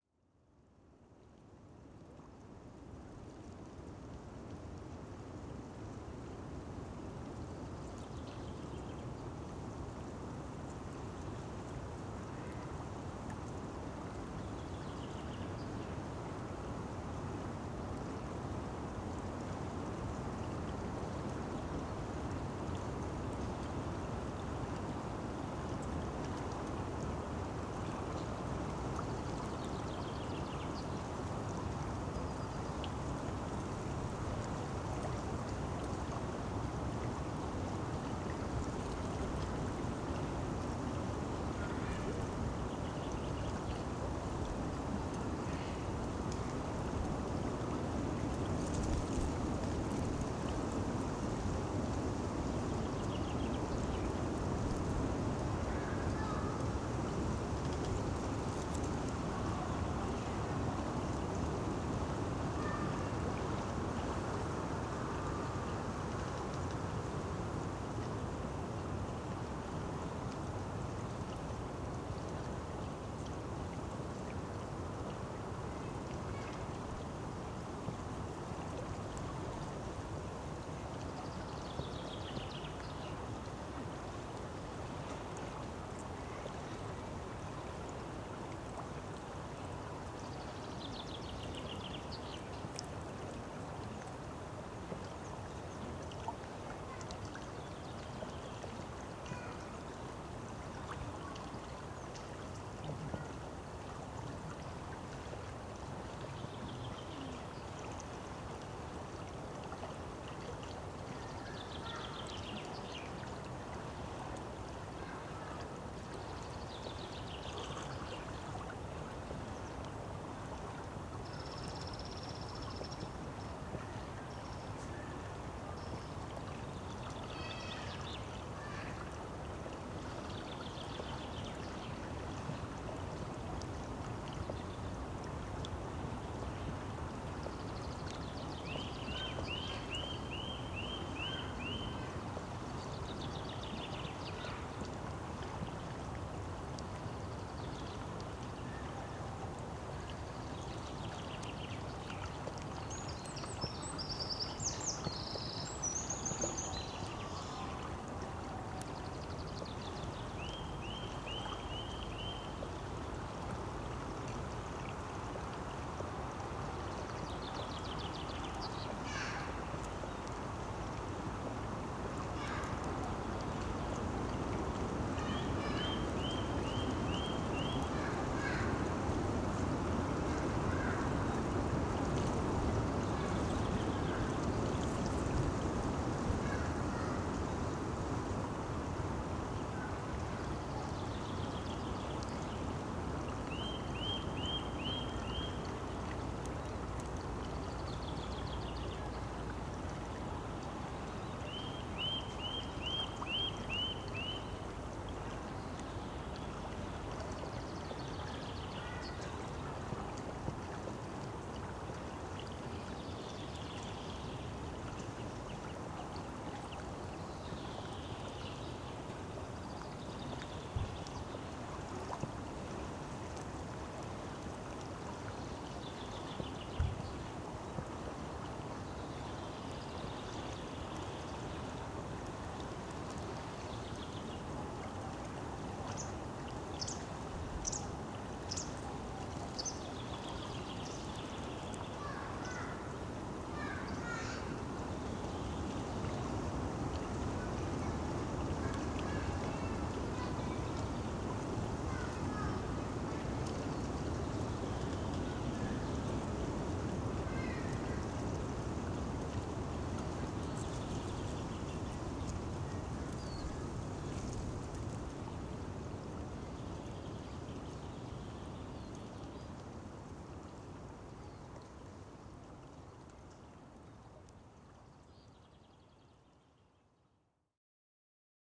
Ilciukai, Lithuania, at river
rivershore soundscape. windy day.
Utenos rajono savivaldybė, Utenos apskritis, Lietuva, 5 April 2020